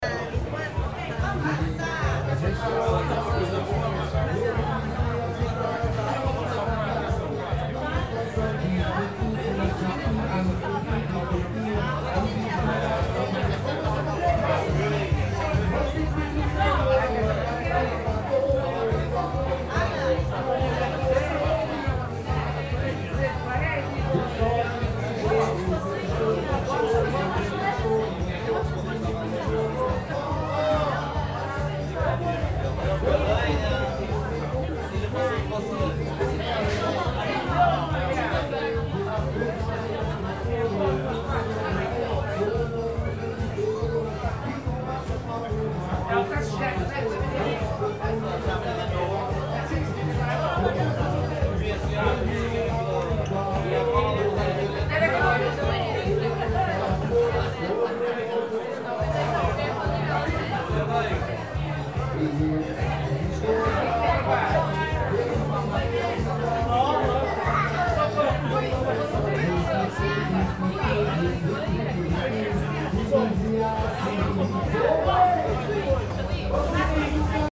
Canada do Porto, São Mateus da Calheta, Portugal - São Mateus
A summer celebration at São Mateus town.
10 August 2019, ~23:00